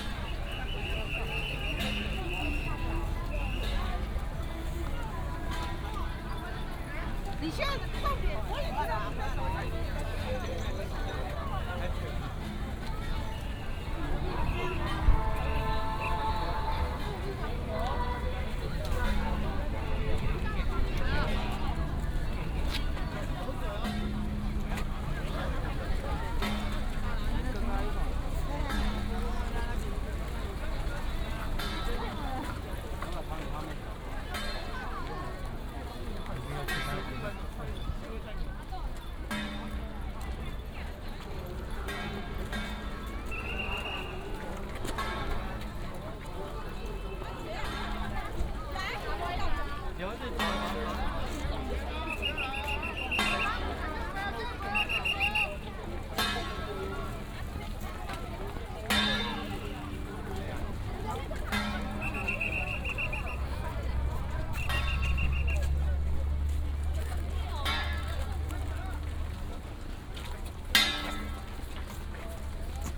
Shatian Rd., Shalu Dist. - Baishatun Matsu Pilgrimage Procession
Baishatun Matsu Pilgrimage Procession, A lot of people, Directing traffic, Whistle sound, Footsteps